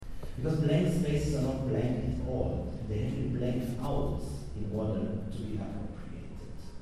blank spaces
LIMINAL ZONES WORKSHOP, CYPRUS, Nikosia, 5-7 Nov 2008, Florian Schneider at his lecture "imagunary property"